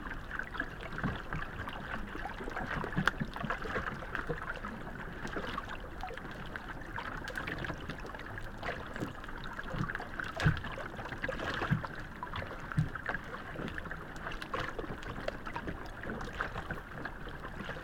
another spot for my hydrophone